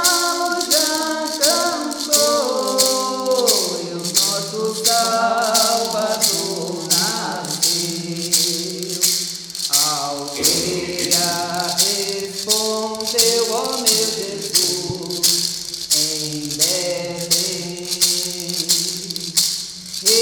St Anna, Hamm, Germany - Analias prayer
Following the conference “Traditionally Sustainable” in Hofgeismar, a delegation of contributors from Brazil are guests of FUgE (Forum for Justice, Environment and Development) in Hamm. They meet with the “Heimatverein Heessen” for a conversation; and give a talk at FUgE Fairtrade Shop in the evening. With members of the “Heimatverein”, they visit the chapel of St. Anna. Analia A. da Silva from the Tuxa peoples performs a traditional prayer. Aderval Costa adds a prayer to Holy Mary in Latin. He writes: Anália Aparecida da Silva (Tuxá-Volk aus Pirapora am Fluss São Francisco) singt zu Beginn auf Truca und dann auf Portugiesische ein Gebet: Tupan, Gott der Indigenen, ist über all, der Hahn kündigt die Geburt des Retters für die Dorfbewohner, Kinder Jesus Christus. Anália sagt: Wir brauchen vor so vieler Ungerechtigkeit mehr Zusammenhalt. Der Rasseln, der Maracá, im Hintergrund soll dafür sorgen, dass nicht zuletzt unsere Ahnen uns hören.